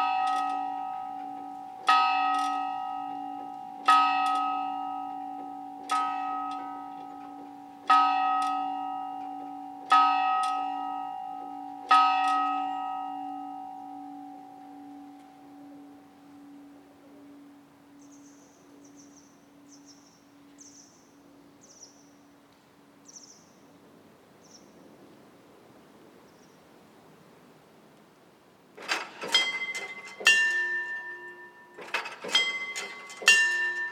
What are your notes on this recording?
Abbaye de Royaumont, Clocher : 7h - 8h et 10h